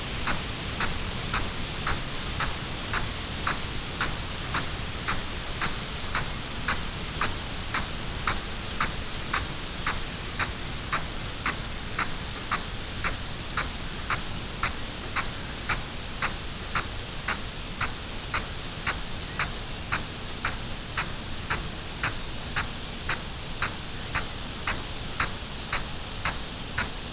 Green Ln, Malton, UK - field irrigation system ..
field irrigation system ... xlr SASS to Zoom F6 ... a Bauer SR 140 ultra sprinkler to Bauer Rainstart E irrigation system ... SASS on the ground ... the sprinkler system gradually gets pulled back to the unit so it is constantly moving ...
England, United Kingdom